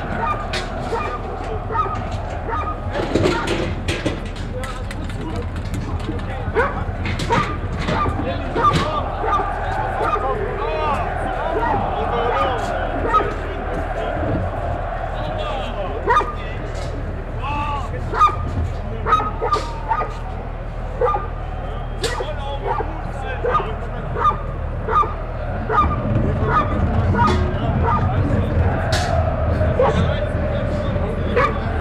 At the RWE soccer station during a soccer cup match. The sound of fireworks, fans chanting, the voice of the stadium speaker and the voice of the security guards, police and their dogs.
Am RWE Stadion während eines Pokal Spiels. Der Klang von Feuerwerkkörpern, Fangesänge, die Stimme des Stadionsprechers, Ordnern und Polizei mit ihren Hunden.
Projekt - Stadtklang//: Hörorte - topographic field recordings and social ambiences